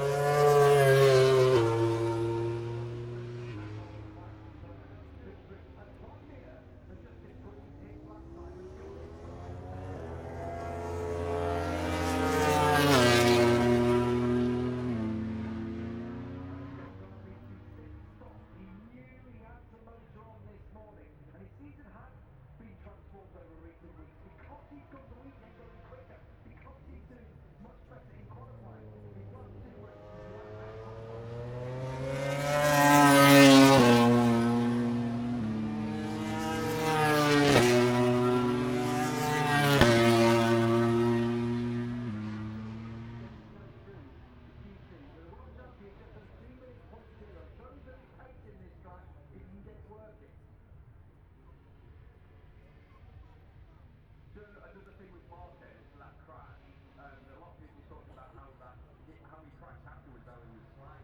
Silverstone Circuit, Towcester, UK - british motorcycle grand prix 2021 ... moto grand prix ...
moto grand prix free practice three ... copse corner ... olympus ls 14 integral mics ...
England, United Kingdom, 28 August 2021, 09:50